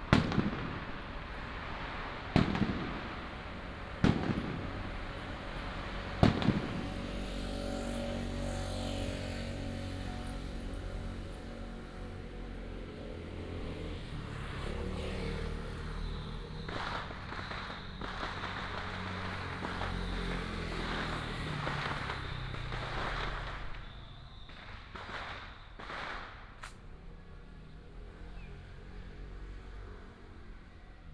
Firecrackers and fireworks, Matsu Pilgrimage Procession